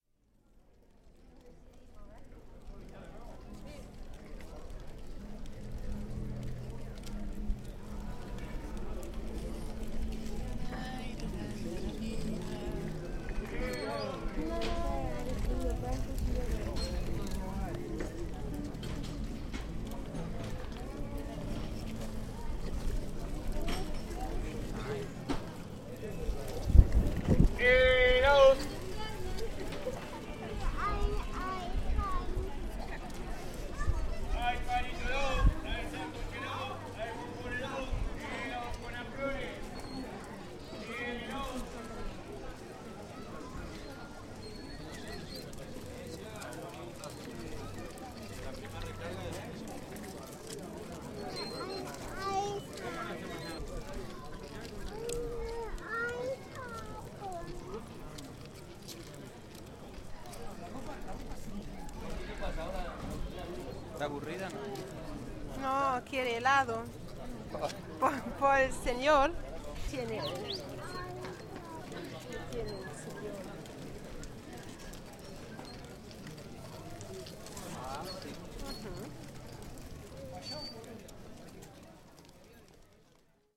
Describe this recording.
The seller screams:Ice cream! Ice creme! And two year old Franca askes for ice cream only an instant later, even though she does not speak nor understand spanish. Is she bored? asks my cousin. No, she just wants ice- cream.